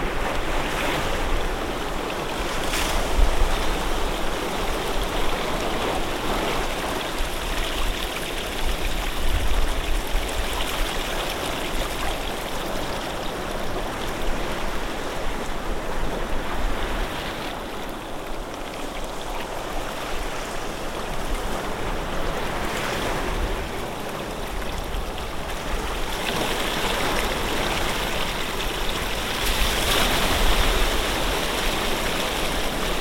sea in springtime in Punta Secca (Santa Croce camerina, RG - Sicily, Italy) -April 2003
Punta Secca, Province of Ragusa, Italy